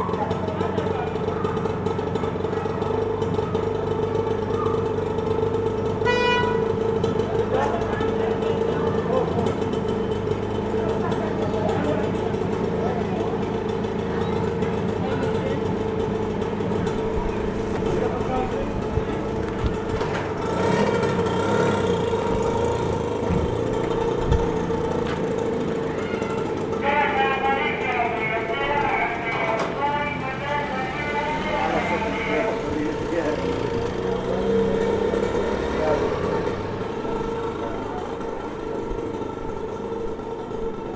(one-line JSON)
{"title": ":jaramanah: :street vendor V: - fifteen", "date": "2008-10-20 10:11:00", "latitude": "33.49", "longitude": "36.33", "altitude": "676", "timezone": "Asia/Damascus"}